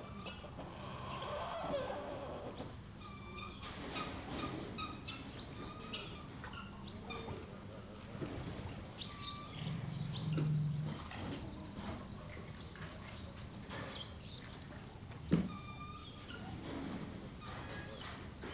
SBG, El Petit Zoo den Pere - Mañana
Ambiente en el Petit Zoo den Pere una mañana de verano.
Spain, 4 August 2011, 09:30